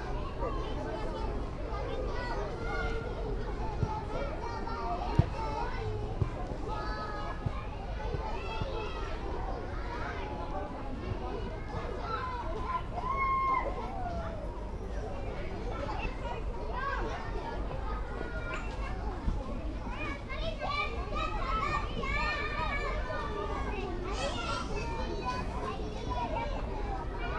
Poznan, Piatkowo, Sobieskiego housing complex - kindergarten
kids going crazy on a kindergarten playground. (roland r-07)